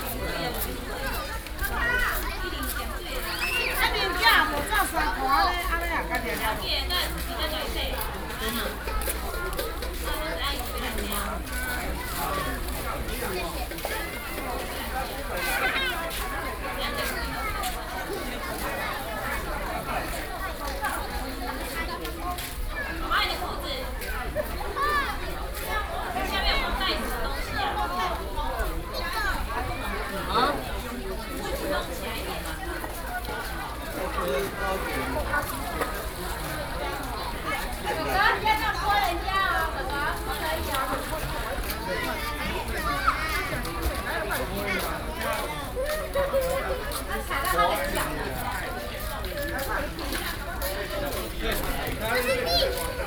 tuman, Keelung - Playing in the water
The pool area, Children play in the water, Sony PCM D50
基隆市 (Keelung City), 中華民國, 24 June 2012, ~14:00